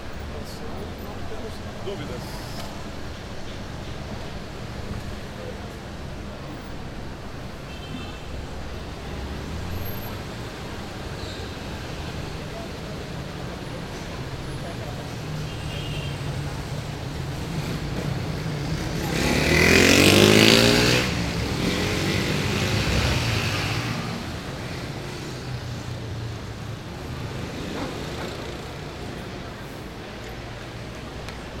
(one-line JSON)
{"title": "Rua Antônio Carlos - 4-000, R. da Consolação, 0130 - República, São Paulo, Brasil - Antônio Carlos - São Paulo - Brazil", "date": "2019-04-26 12:30:00", "description": "At lunch time, next to Paulista, people walk around and eat. Cars and motorcycles pass by.\nRecorded with Tascam DR-40 recorder and Shotgun Rode NTG 2 microphone.", "latitude": "-23.56", "longitude": "-46.66", "altitude": "821", "timezone": "GMT+1"}